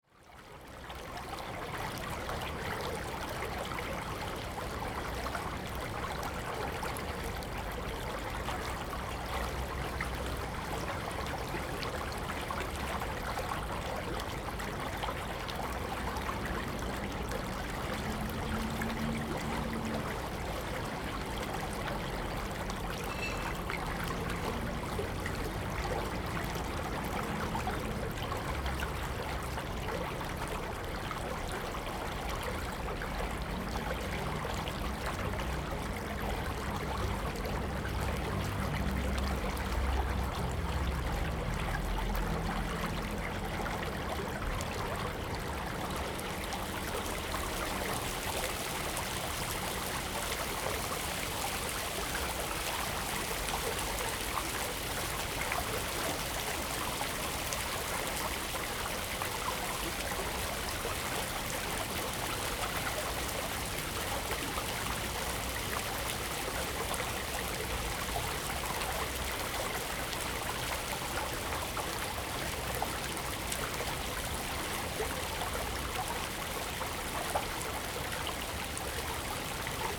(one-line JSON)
{"title": "吉安溪, Ji'an Township - Stream", "date": "2014-09-29 08:47:00", "description": "Stream, Drainage channel, Traffic Sound\nZoom H2n MS+XY", "latitude": "23.98", "longitude": "121.59", "altitude": "24", "timezone": "Asia/Taipei"}